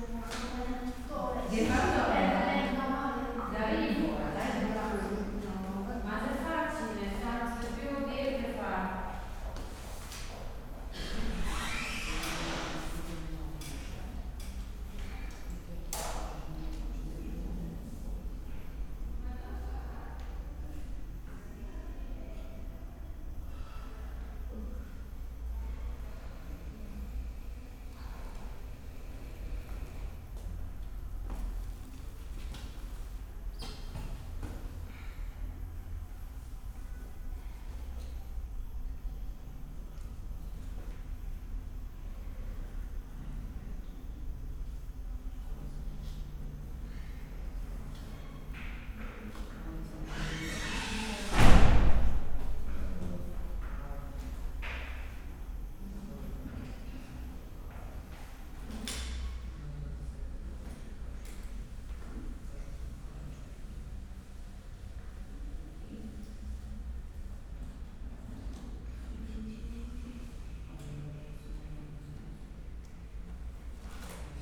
Ospedale Maggiore, Piazza dell'Ospitale, Trieste, Italy - waiting room
hospital, waiting room
(SD702, DPA4060)